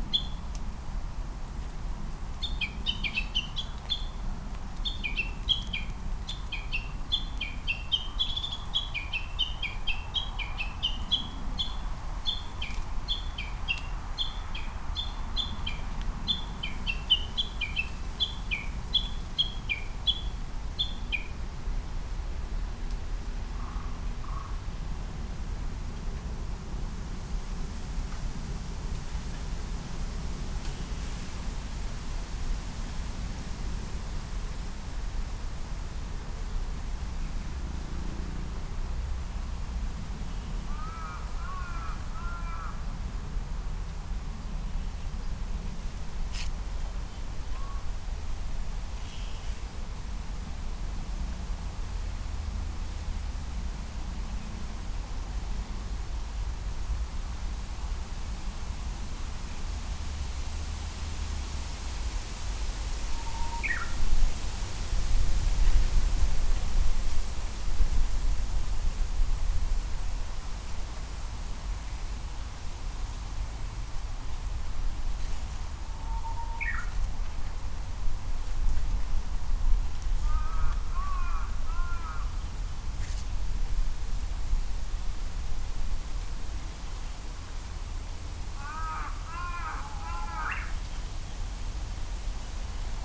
Ichimiyake Yasu-shi, Shiga-ken, Japan - Japanese bush warbler
Japanese bush warbler (uguisu, 鶯), pheasant (kiji, キジ), crow (karasu, カラス), and traffic sounds recorded on a Sunday afternoon with a Sony PCM-M10 recorder and Micbooster Clippy EM172 stereo mics attached to a bicycle handlebar bag.